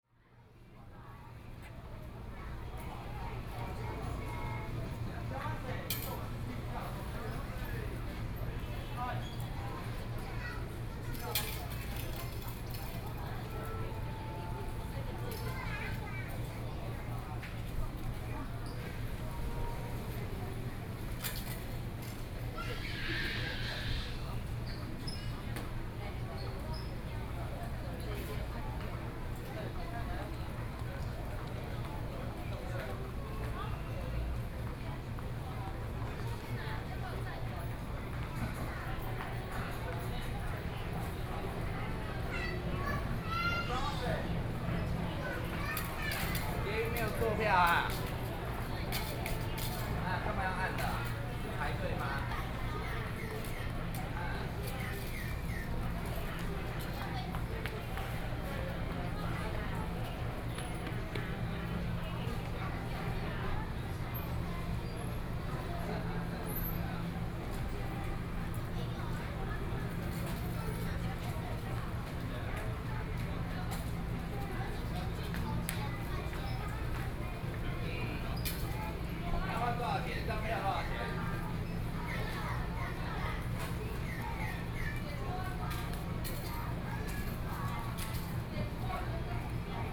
Chungli station, Taoyuan County - Station entrance
in the Station entrance, Zoom H4n+ Soundman OKM II
Zhongli City, 健行路地下道, 12 August 2013